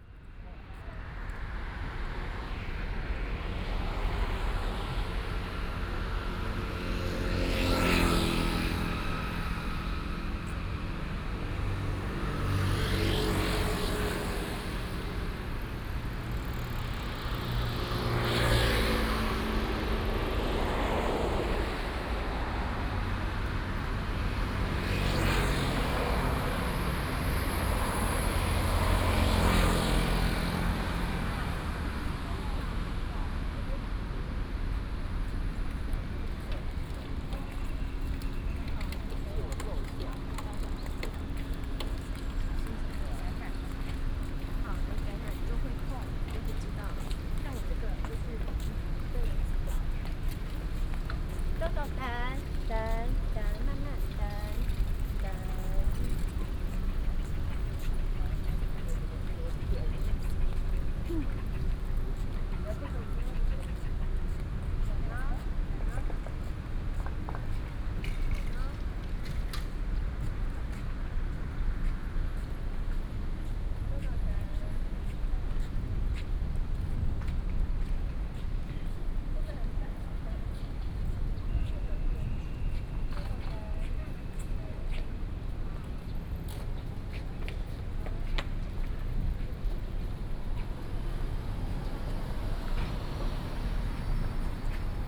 Sec., Xinhai Rd., Da'an Dist. - Walking on the road
Walking across the road, Then go into the convenience store
Taipei City, Taiwan